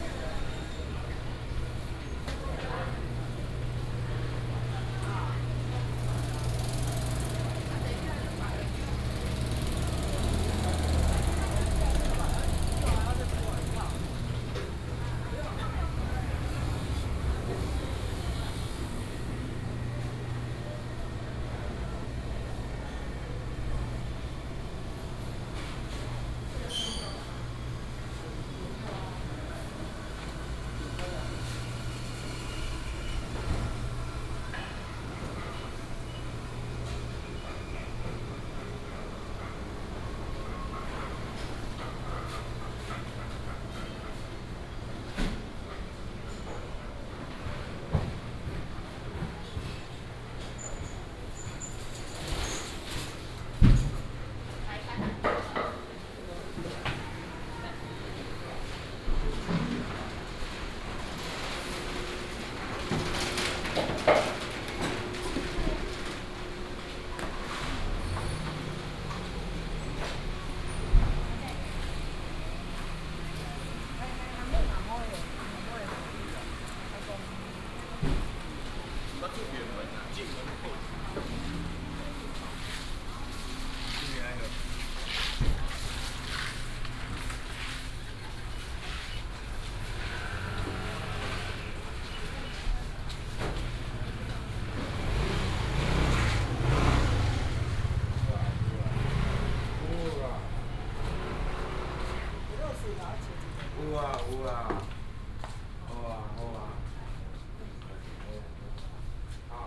埔心新興街, Yangmei Dist. - walking in the traditional market area
walking in the traditional market area, Ready to operate in the market
Taoyuan City, Taiwan, 26 August 2017, 06:31